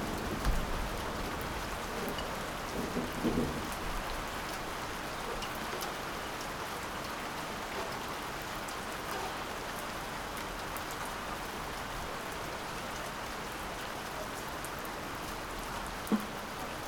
{"title": "Köln, Maastrichter Str., backyard balcony - spring rain, thunder", "date": "2014-04-23 19:20:00", "description": "spring rain and thunder, early evening in the backyard\n(Sony PCM D50)", "latitude": "50.94", "longitude": "6.93", "altitude": "57", "timezone": "Europe/Berlin"}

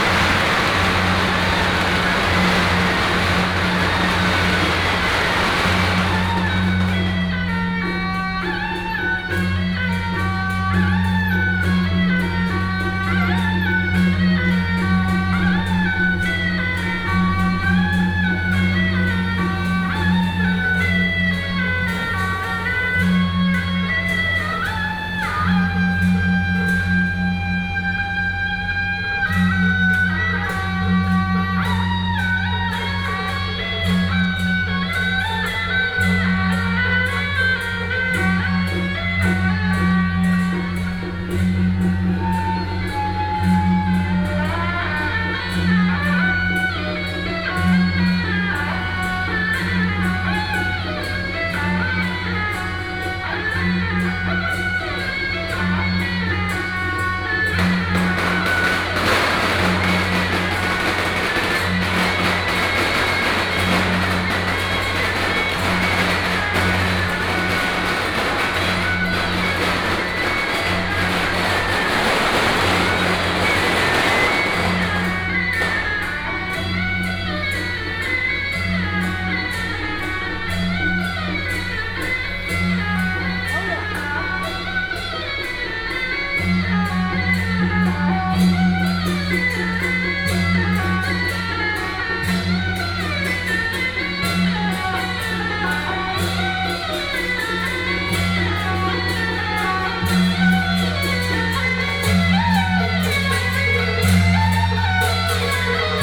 {"title": "台北大橋, 大同區, 台北市 - Under the bridge", "date": "2017-06-05 19:05:00", "description": "Traditional temple festivals, Under the bridge, “Din Tao”ßLeader of the parade, Firecrackers", "latitude": "25.06", "longitude": "121.51", "altitude": "12", "timezone": "Asia/Taipei"}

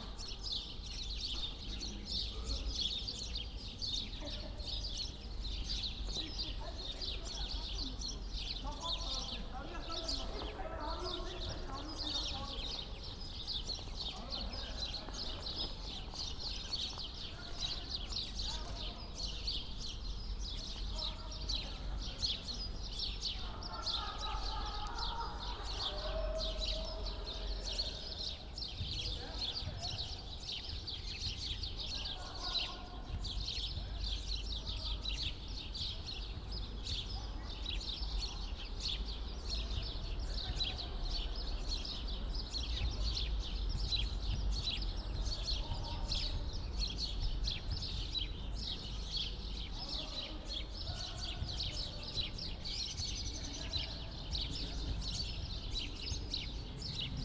{"title": "playground, Maybachufer, Berlin, Deutschland - playground ambience", "date": "2020-03-22 18:00:00", "description": "cold SUnday early evening, playground Schinkestr./Maybachufer, normally you'd expect a few parents with kids here, but it's cold and there's corona virus spreading. A few youngsters playing soccer, very rough and obviously contrary to the rules of conduct demanded by the government.\n(Sony PCM D50, DPA4060)", "latitude": "52.49", "longitude": "13.42", "altitude": "39", "timezone": "Europe/Berlin"}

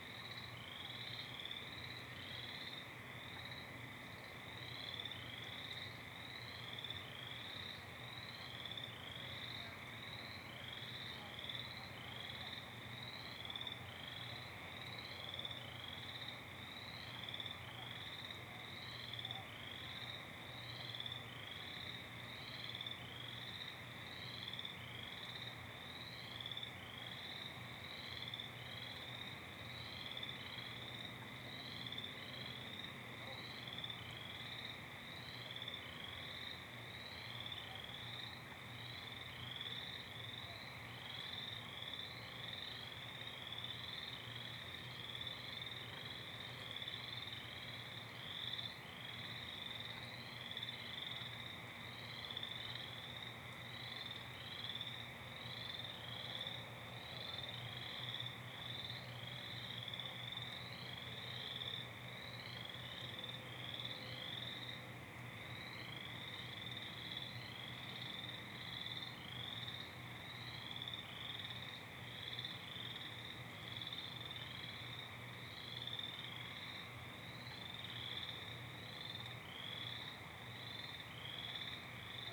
{"title": "Waters Edge - Swamp Sounds", "date": "2022-05-13 21:30:00", "description": "After a few days of rain the swamp has become rather noisy. There's also some other neighborhood sounds like barking dogs, passing traffic, and maybe some neighbors talking in the distance", "latitude": "45.18", "longitude": "-93.00", "altitude": "278", "timezone": "America/Chicago"}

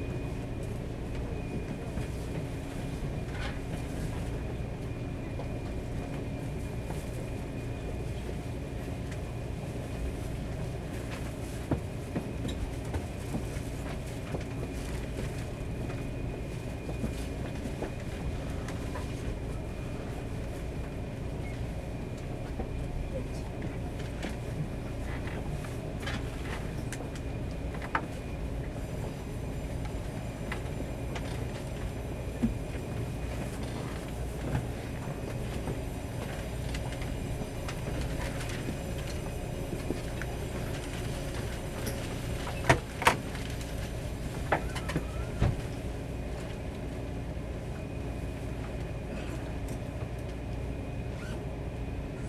{"title": "Guishan, Taoyuan - On the train", "date": "2012-02-01 07:31:00", "latitude": "24.98", "longitude": "121.33", "altitude": "103", "timezone": "Asia/Taipei"}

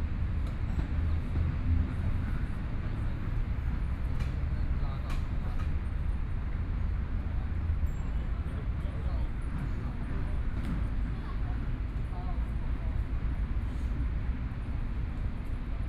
{"title": "Linsen Park, Taipei City - The park at night", "date": "2014-02-28 20:13:00", "description": "The park at night, Children's play area, Traffic Sound, Environmental sounds\nPlease turn up the volume a little\nBinaural recordings, Sony PCM D100 + Soundman OKM II", "latitude": "25.05", "longitude": "121.53", "timezone": "Asia/Taipei"}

